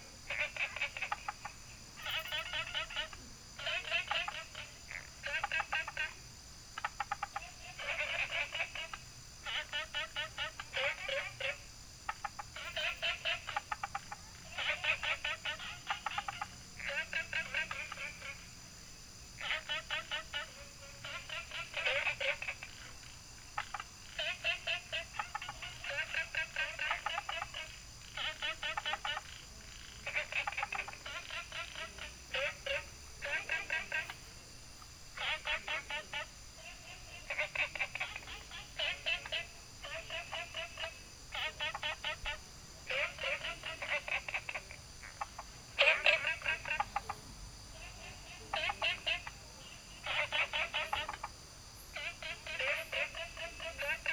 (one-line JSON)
{"title": "Taomi Ln., Puli Township - Small ecological pool", "date": "2015-08-10 22:43:00", "description": "Frogs chirping, Insects called, Small ecological pool, Dogs barking", "latitude": "23.94", "longitude": "120.94", "altitude": "463", "timezone": "Asia/Taipei"}